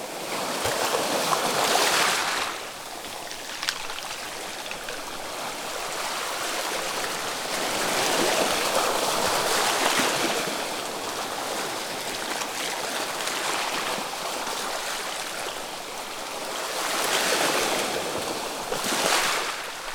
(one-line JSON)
{"title": "Daintree Rainforest, QLD, Australia - Waves at the mouth of Emmagen Creek", "date": "2016-12-16 11:00:00", "description": "hoping a crocodile wouldn't emerged from the sea and engulf me..", "latitude": "-16.04", "longitude": "145.46", "timezone": "Europe/Berlin"}